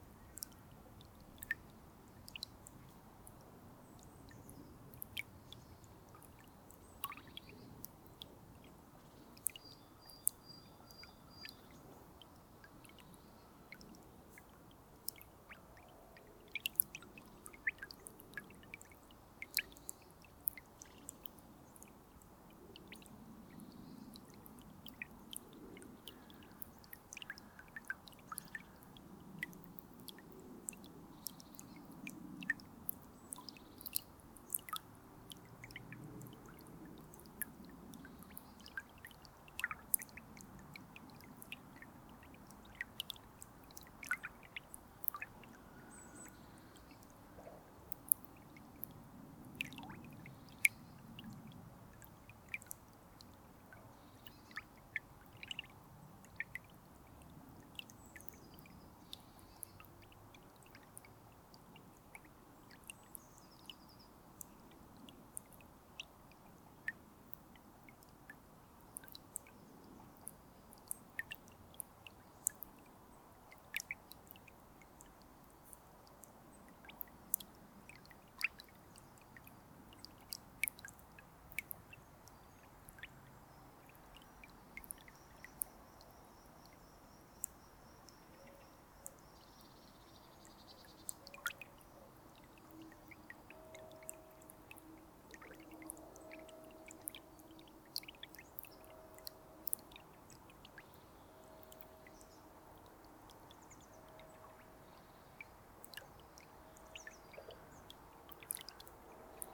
Court-St.-Étienne, Belgique - The river Thyle
The river Thyle, a small quiet place into the forest.
Court-St.-Étienne, Belgium, 17 February 2016, 10:00